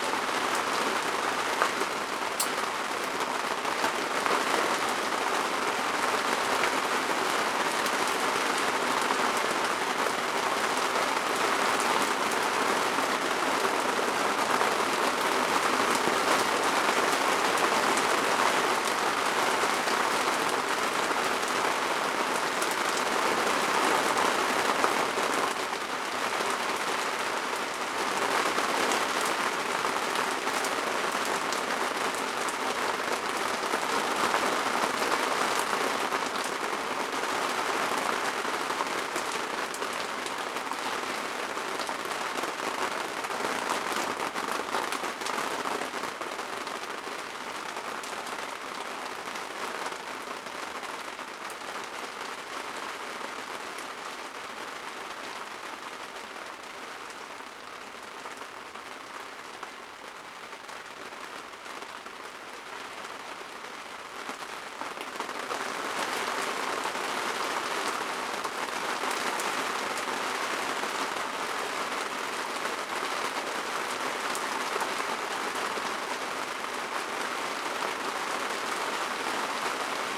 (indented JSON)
{
  "title": "workum, het zool: marina, berth h - the city, the country & me: thunderstorm",
  "date": "2011-07-01 00:52:00",
  "description": "short thunderstorm with heavy rain\nthe city, the country & me: july 1, 2011",
  "latitude": "52.97",
  "longitude": "5.42",
  "altitude": "1",
  "timezone": "Europe/Amsterdam"
}